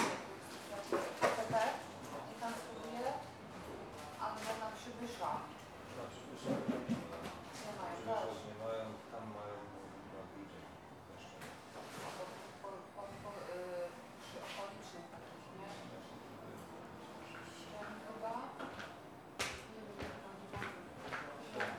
{
  "title": "Poznan, Lazarz district, MRI clinic - waiting room",
  "date": "2014-06-18 12:25:00",
  "description": "small waiting room of a MRI clinic. repairman packing their tools, patients making appointments at the desk, conversations of receptionists.",
  "latitude": "52.41",
  "longitude": "16.90",
  "altitude": "93",
  "timezone": "Europe/Warsaw"
}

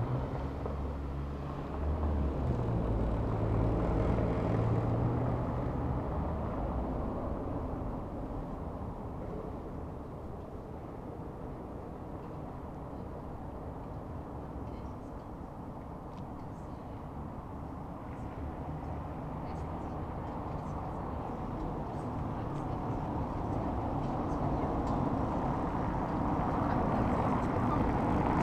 {
  "title": "Berlin: Vermessungspunkt Friedel- / Pflügerstraße - Klangvermessung Kreuzkölln ::: 02.07.2010 ::: 01:35",
  "date": "2010-07-02 01:35:00",
  "latitude": "52.49",
  "longitude": "13.43",
  "altitude": "40",
  "timezone": "Europe/Berlin"
}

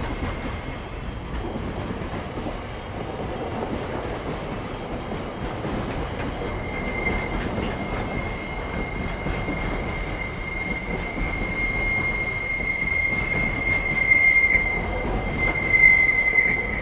{
  "title": "Mews Rd, Fremantle WA, Australia - Freight Train Passing Ferris Wheel on the Esplanade",
  "date": "2017-11-16 18:45:00",
  "description": "Another recording of the freight train rolling through Fremantle. No sprinklers on the tracks in this section. Recorded with a Zoom H2n with ATH-M40X headphones.",
  "latitude": "-32.06",
  "longitude": "115.74",
  "altitude": "2",
  "timezone": "Australia/Perth"
}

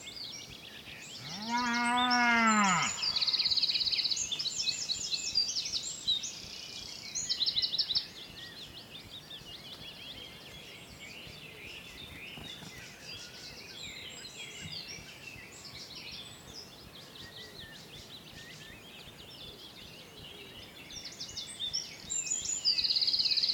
{
  "title": "Derrysallagh, Geevagh, Co. Sligo, Ireland - Sedge Warbler, Wren, Cuckoo, Cows and Others",
  "date": "2019-06-18 08:00:00",
  "description": "I can't remember what time it was exactly, early in the morning but after dawn. I walked down the drive and heard a bird singing that I'd never heard before. I snuck under the Horsechestnut trees and placed the microphone as near as I could to the bird (a Sedge Warbler). There's a nice mix of other singers and some reverberated mooos.",
  "latitude": "54.09",
  "longitude": "-8.22",
  "altitude": "83",
  "timezone": "Europe/Dublin"
}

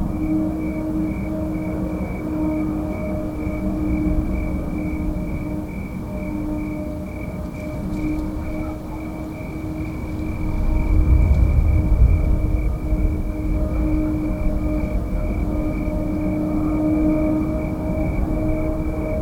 {
  "title": "Redwood City, CA, USA - Unknown 4am sound",
  "date": "2018-10-06 04:30:00",
  "description": "Unknown 4am sound lasted a long time, at least an hour. I did not hear it start or end. I woke up in the middle of it. Recorded with a microphone and zoom out of a window in my house.",
  "latitude": "37.47",
  "longitude": "-122.24",
  "altitude": "22",
  "timezone": "GMT+1"
}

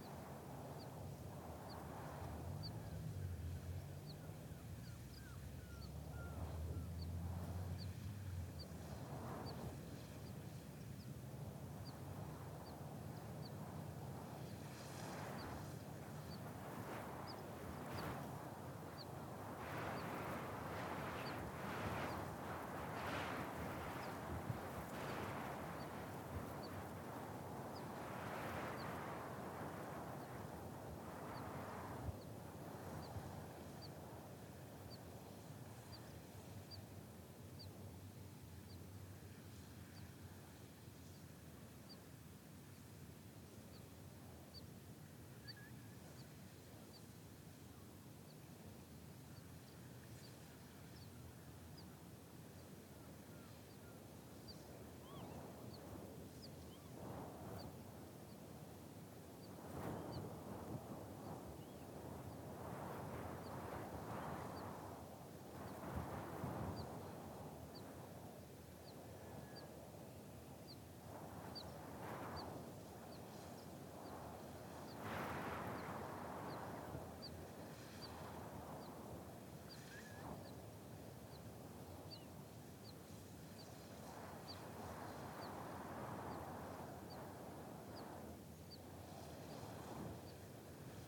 {
  "title": "near Windhouse, Yell, Shetland Islands, UK - Sheep grazing right beside an inlet",
  "date": "2013-08-03 09:22:00",
  "description": "A lovely situation which I had passed in the car the day before; sheep grazing right beside the water, actually going right down to the water to eat the seaweed in some places. These sheep - like all prey, I guess - ran away from me as soon as I approached them, so I set my FOSTEX FR-2LE and Naiant X-X microphones down in the grass near a bit of bank covered in bits of wool (I think the sheep scratch against the earth there) and went away onto the other side of the bank, so as to hopefully encourage the sheep to approach my recorder, and remove my own threatening presence from their grazing area. You can faintly hear the sheep passing through the grass, and baa-ing to each other, you can also hear the wind, and some birds quite distantly. It's very windy in Shetland, especially in an exposed spot like this.",
  "latitude": "60.60",
  "longitude": "-1.09",
  "altitude": "4",
  "timezone": "Europe/London"
}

